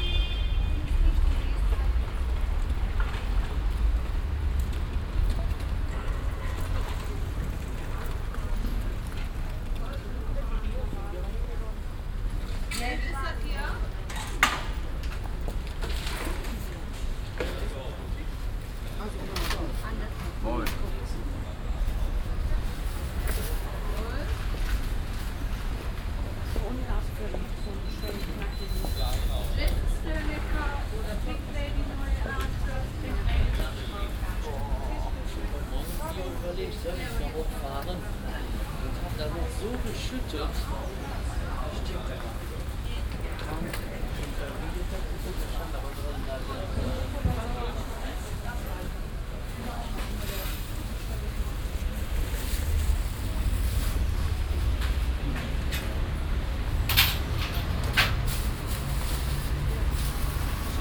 weekly market - cologne, pastor könn place, weekly market
marktplatz morgens, schritte auf nassemkopfsteinpflaster, kundengespräche, geldwechsel
soundmap: köln/ nrw
project: social ambiences/ listen to the people - in & outdoor nearfield recordings
4 June 2008